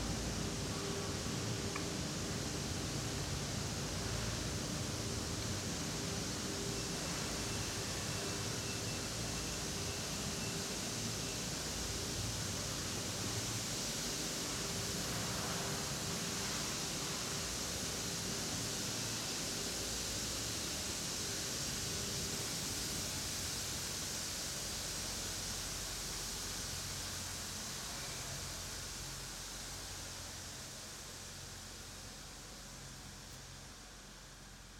Caminando por la fábrica de cerveza Quilmes (2).